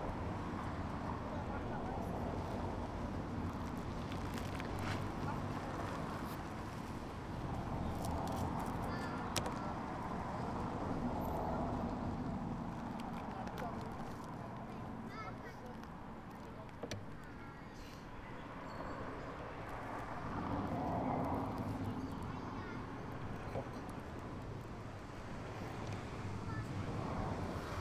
Beelitz Heilstätten, Beelitz, Deutschland - Pförtnerhäuschen
At the "Pförtnerhäuschen", Beelitz Heilstätten, former janitor's lodge, now an inn with very tasty asparagus dishes, as is the regional prime specialty.